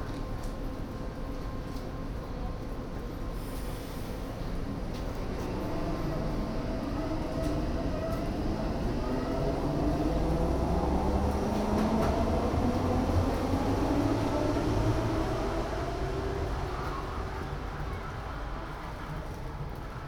For my multi-channel work "Ringspiel", a sound piece about the Ringbahn in Berlin in 2012, I recorded all Ringbahn stations with a Soundfield Mic. What you hear is the station Frankfurter Allee on an afternoon in June 2012.